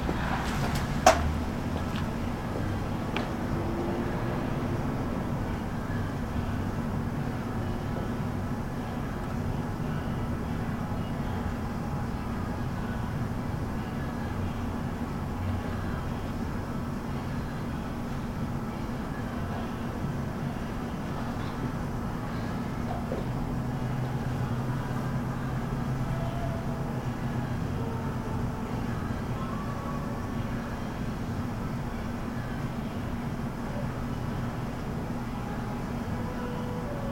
Madison Ave, Bldg V - Sunny afternoon on the back porch
that's not my name